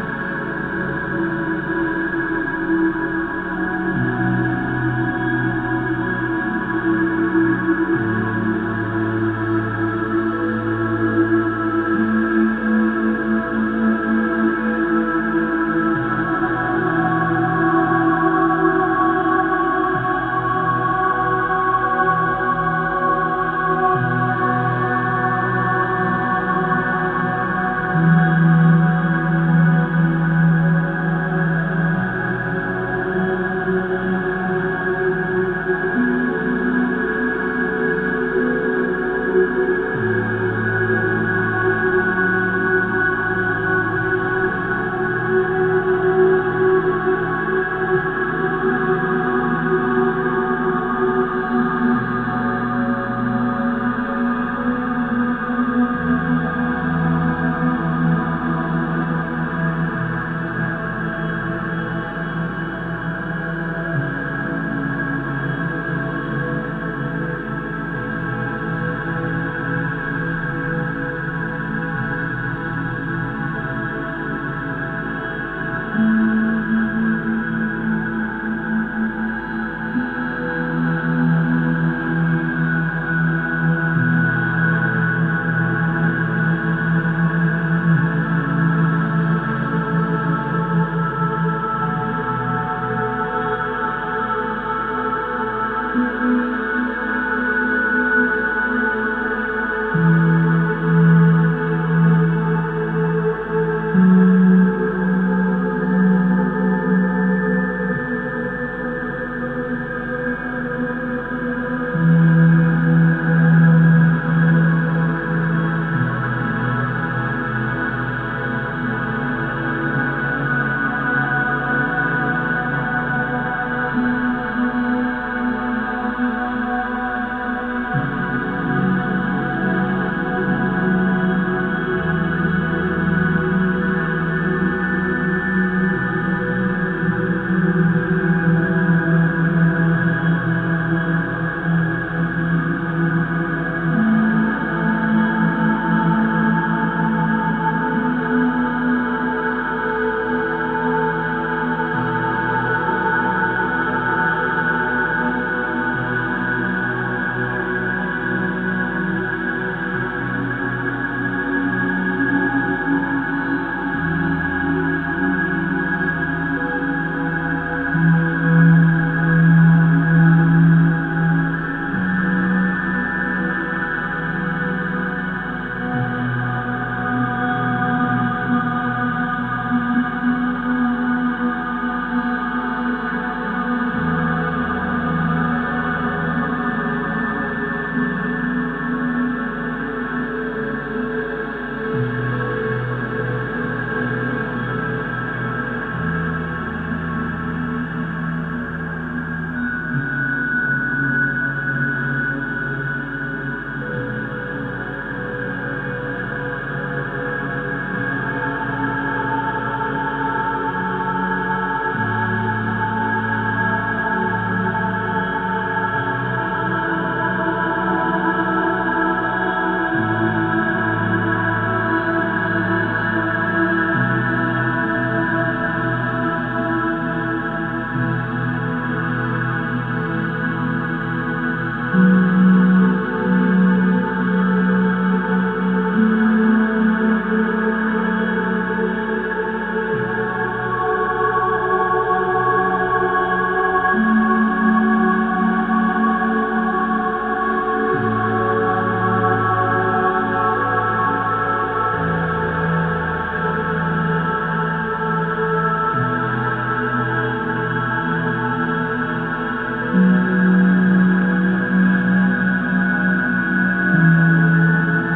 Floating Point Float Centre, Pangbourne - Float Tank Hydrophone
Hydrophone recording of the first eleven minutes of an hour-long floating session. Recorded on a SoundDevices SD788T with a matched pair of JRF Hydrophones placed either side of the float tank.
Reading, UK